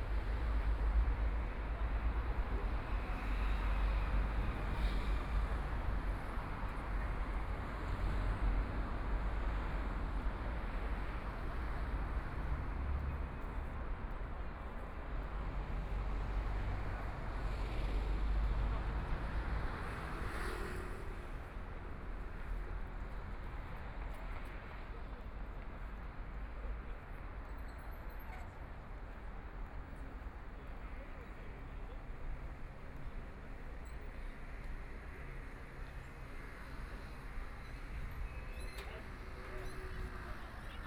四平路, Shanghai - walking in the Street
walking in the Street, traffic sound, Binaural recording, Zoom H6+ Soundman OKM II
20 November, 10:12